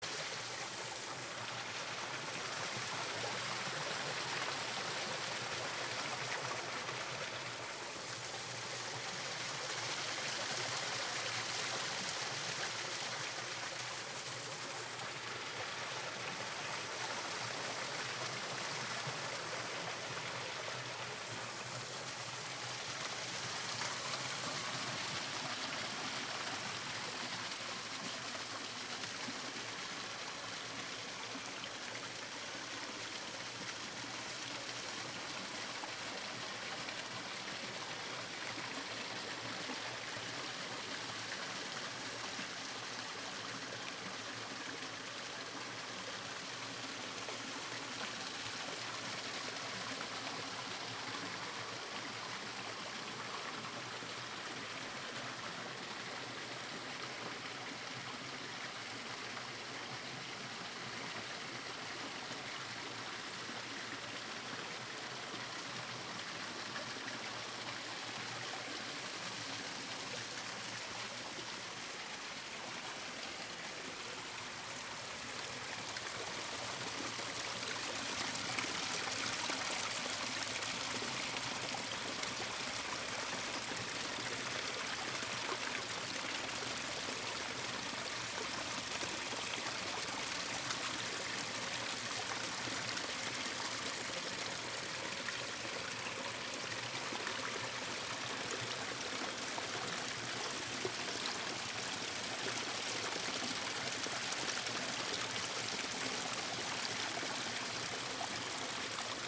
Berkeley - Strawberry creek
Strawberry creek just above the University of California in Berkeley campus
California, United States of America, 25 March, 04:14